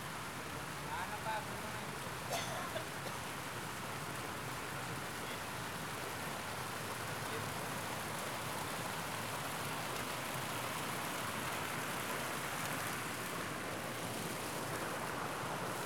Berlin, Gardens of the World, oriental garden - water shifts
different fountains in oriental gardens. i walked around slowly in order to get smooth fades between various flows of water.
Berlin, Germany, 3 August, 12:58